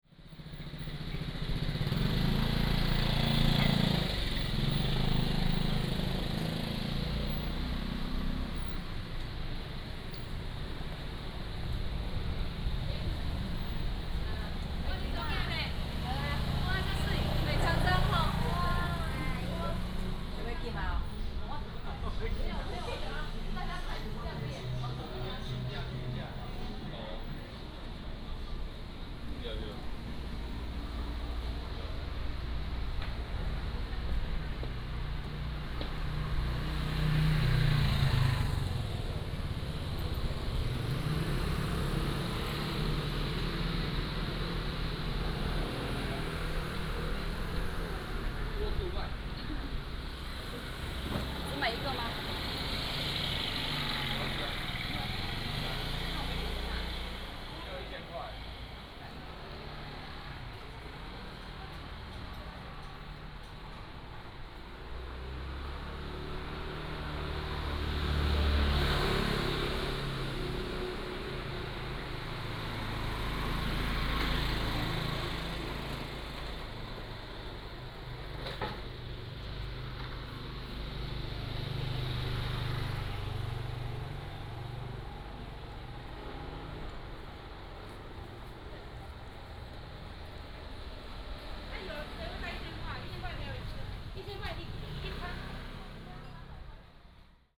Walking in the Street, Traffic Sound
福建省, Mainland - Taiwan Border